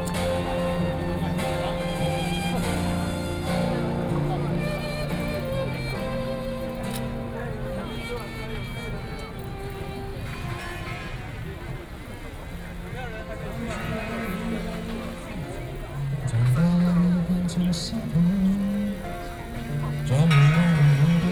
Liberty Square, Taipei - No Nuke
Opposed to nuclear power plant construction, Binaural recordings, Sony PCM D50 + Soundman OKM II
中正區 (Zhongzheng), 台北市 (Taipei City), 中華民國, 3 May